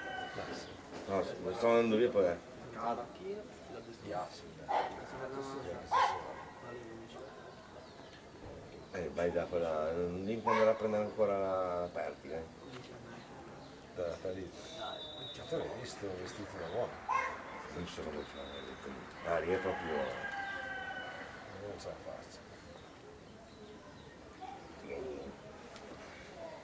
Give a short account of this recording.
same time as perspective IIA, now close to the entrance of the bar, the same dog an chickens of perspective IIA in the background, lazy people talking on the chairs in the foreground. At some times it could be heard the sound of some kids in the background training football (perspective IIC)